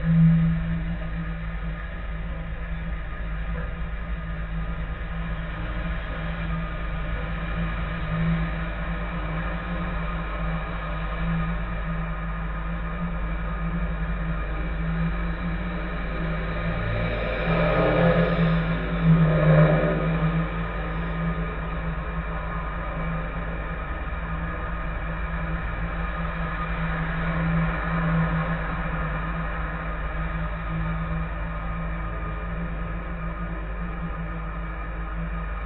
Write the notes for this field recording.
Dual contact microphone recording of an ordinary street pole. Traffic hum and occasional passing trolleybus resonate strongly through the metal body. Recorded using ZOOM H5.